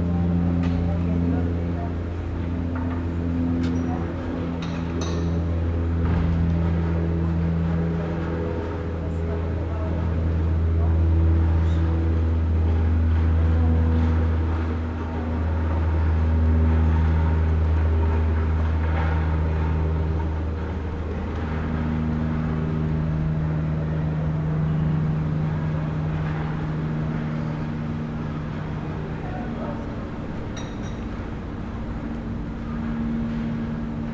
Rue des Bains, Aix-les-Bains, France - Rue piétonne
C'est ma rue préférée d'Aix-les-bains la rue des bains dans la zone piétonne, elle est souvent ventée, j'ai posé l'enregistreur en face du café des bains, pour capter l'ambiance de la rue et ses alentours.
France métropolitaine, France, 30 July 2022